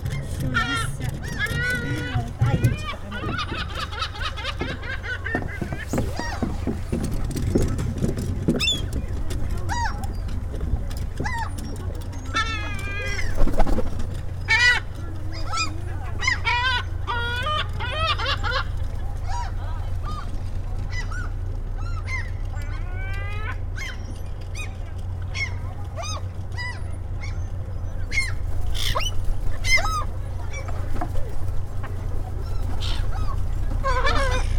Fisksätra marina - Le oiseaux du ponton
Stockholms län, Svealand, Sverige, 5 May, ~17:00